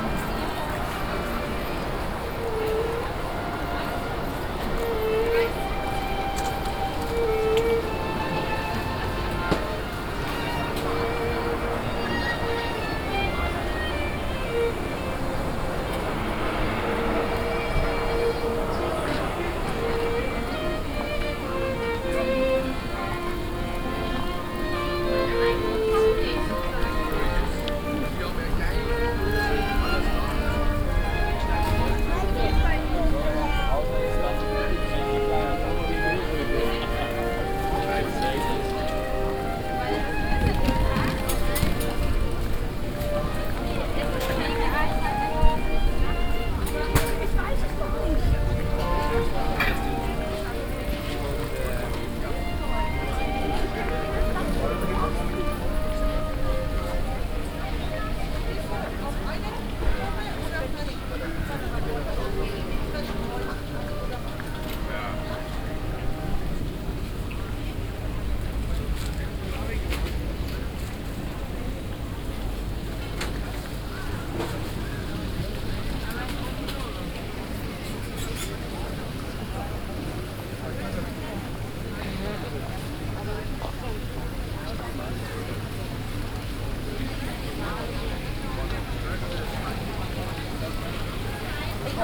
Marktplatz, Erlangen, Deutschland - wochenmarkt
walking on the market, fountain, street musicians
olympus ls-5; soundman okm II
Erlangen, Germany